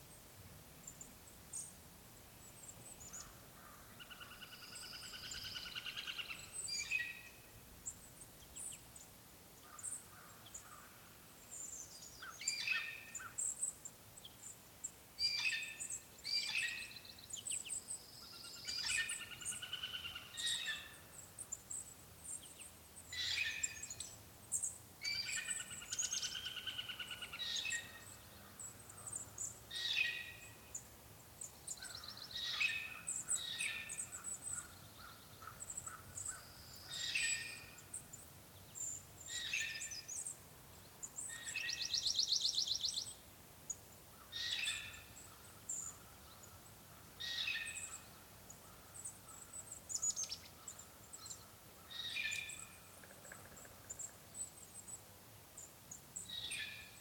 Excerpt from overnight recording. Microphones attached to a tree facing a wildlife pond in a remote forested area. The pond is surrounded by pine trees and the constant sound of wind in the trees. The night was also cold and relatively quiet and the recording setup was not ideal for a quiet soundscape. Never-the-less, this excerpt captures the pre-dawn soundscape at about 5 am, with pileated woodpecker calls and woodpecker drumming, crows and other birds not yet identified.
Arkansas, United States of America, 8 March 2020, 05:00